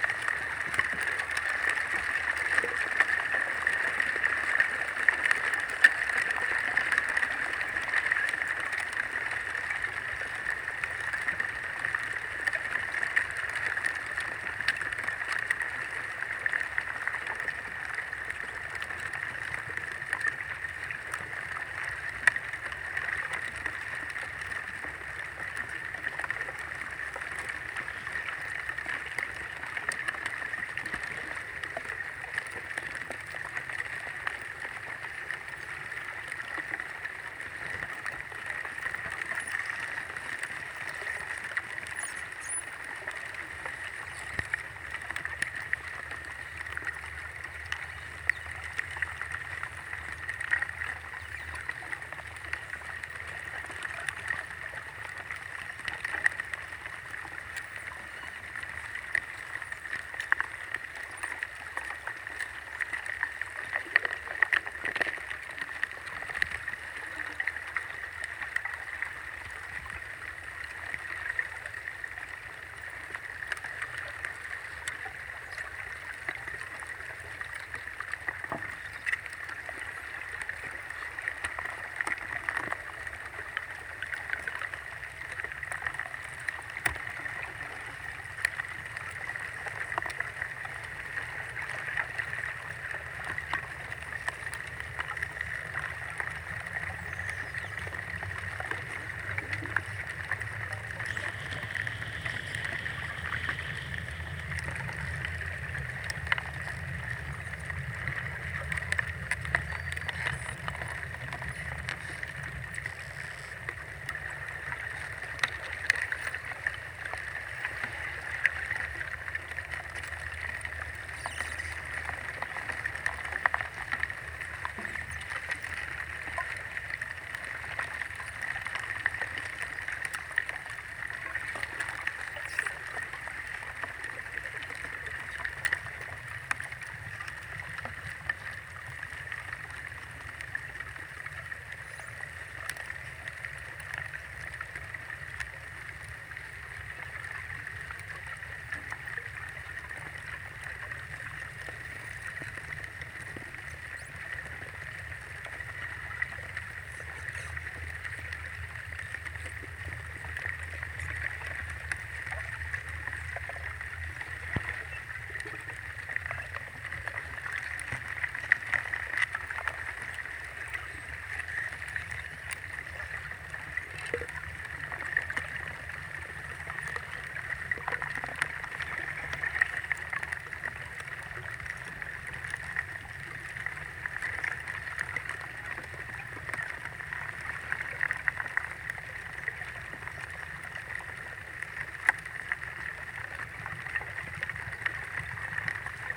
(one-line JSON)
{"title": "Spree, Planterwald - Aquatic recording of the ice smelting during winter on the Spree River", "date": "2017-02-01 15:00:00", "description": "Hyrdophone Jez Riley French under some piece of ice on the Spree river, from Planterwald", "latitude": "52.47", "longitude": "13.49", "altitude": "28", "timezone": "GMT+1"}